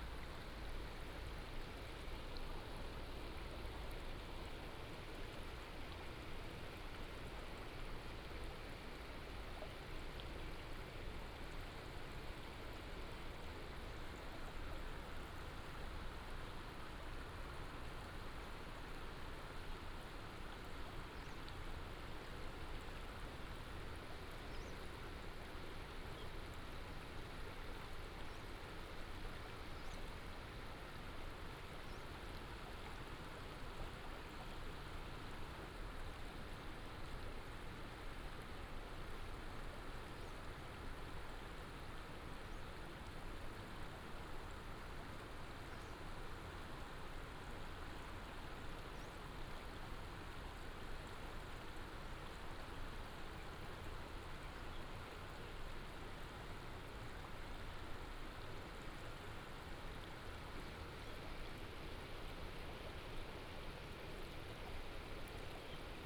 太麻里溪, Taimali Township - Stream
On the river bank, Stream sound